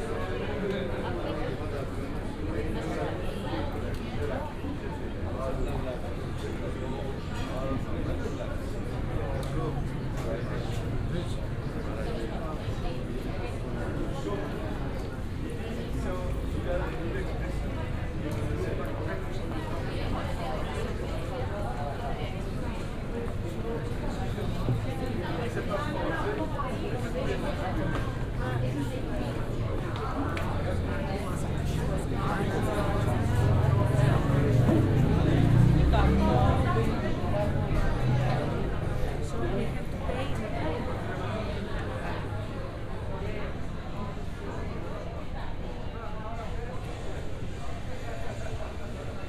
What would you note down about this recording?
(binaural) walking around narrow streets in a district at the foot of Acropolis. Passing by souvenir shops, restaurants and cafes. some empty, some buzzing with conversations. (sony d50 + luhd pm-01 bins)